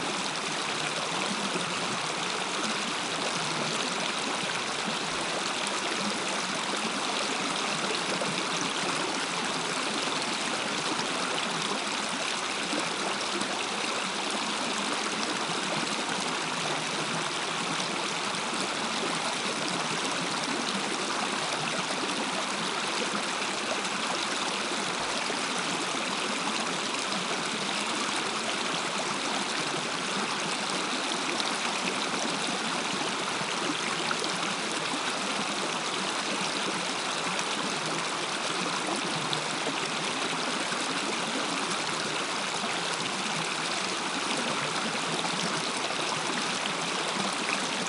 {
  "title": "Strawberry Park Natural Hot Springs, CO, USA - Hot Spring Creek",
  "date": "2016-01-03 10:08:00",
  "description": "Recorded with a pair of DPA 4060s into a Marantz PMD661.",
  "latitude": "40.56",
  "longitude": "-106.85",
  "altitude": "2294",
  "timezone": "America/Denver"
}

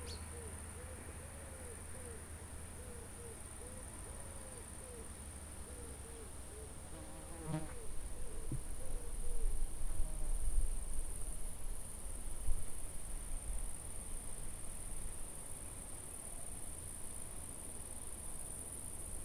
{
  "title": "Rte de Rocheret, Saint-Offenge, France - Passage d'Aixam",
  "date": "2022-07-11 19:10:00",
  "description": "Près d'un champ de blé parsemé d'herbes sauvages, quelques insectes et le passage en solo d'une Aixam propulsée par son moteur monocylindre diesel, la voiturette sans permis des campagnes.",
  "latitude": "45.75",
  "longitude": "6.00",
  "altitude": "530",
  "timezone": "Europe/Paris"
}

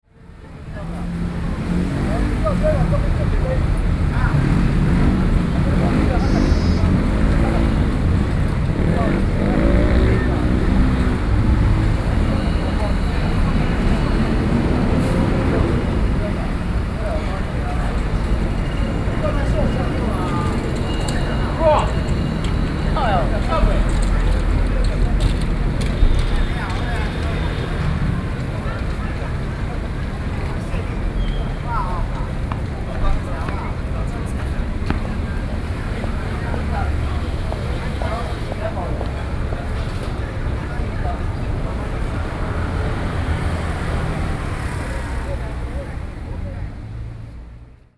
{
  "title": "New Taipei City, Taiwan - Square",
  "date": "2012-11-13 17:37:00",
  "latitude": "25.11",
  "longitude": "121.81",
  "altitude": "63",
  "timezone": "Asia/Taipei"
}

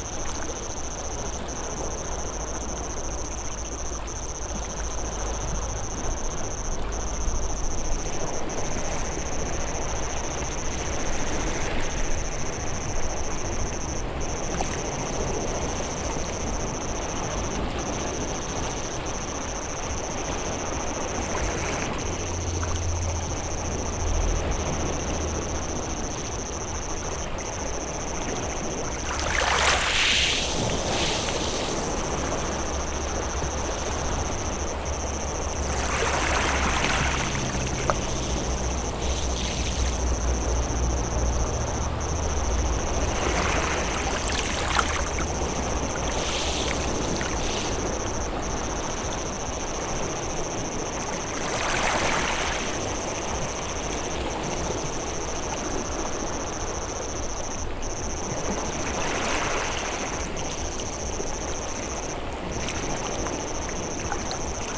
{
  "title": "Bd Stephanopoli de Comene, Ajaccio, France - les Sanguinaires Ajaccio",
  "date": "2022-07-28 21:00:00",
  "description": "wave and water sound\nCaptation ZOOM H6",
  "latitude": "41.91",
  "longitude": "8.71",
  "timezone": "Europe/Paris"
}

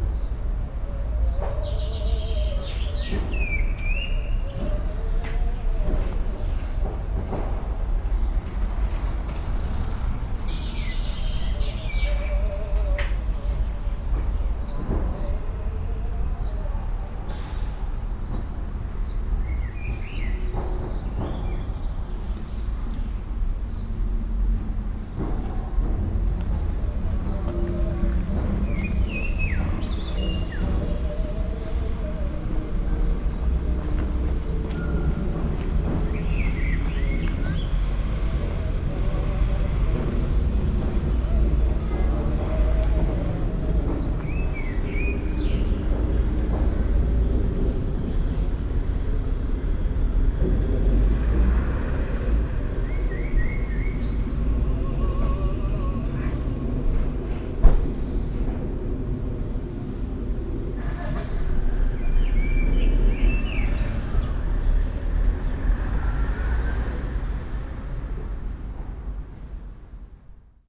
{
  "title": "St. Gallen (CH), passing the theatre - St. Gallen (CH), outside the theatre",
  "description": "accidental duet of a singing bird and an opera singer doing his warm-up. pedestrians passing by. recorded june 7, 2008. - project: \"hasenbrot - a private sound diary\"",
  "latitude": "47.43",
  "longitude": "9.38",
  "altitude": "672",
  "timezone": "GMT+1"
}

